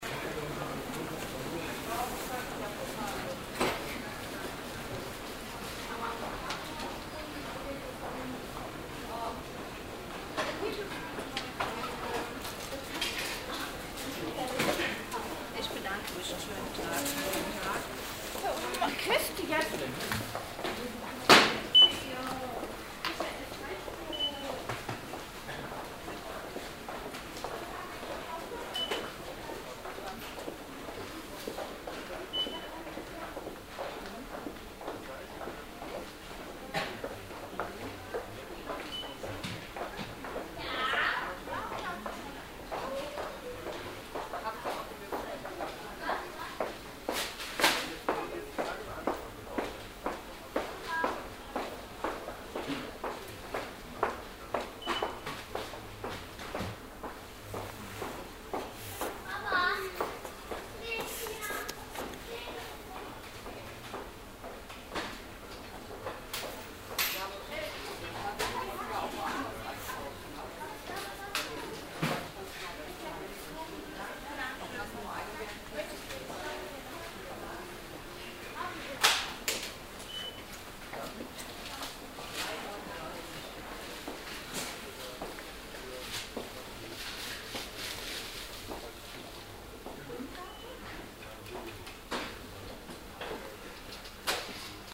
cologne, zeppelinstrasse, kaufhaus, schreibwarenabt
soundmap: köln/ nrw
schreibwarenabteilung eines kaufhauses am mittag - gespräche, kassenpiepsen, raumatmo, schritte
project: social ambiences/ listen to the people - in & outdoor nearfield recordings - listen to the people